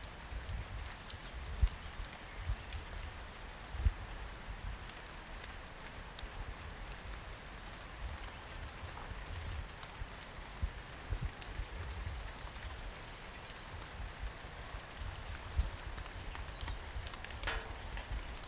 Goldspink Ln, Newcastle upon Tyne, UK - Abandoned playground near goldspink lane
Walking Festival of Sound
13 October 2019
Abandoned playground, underneath play equipment, rain hitting metal.
North East England, England, United Kingdom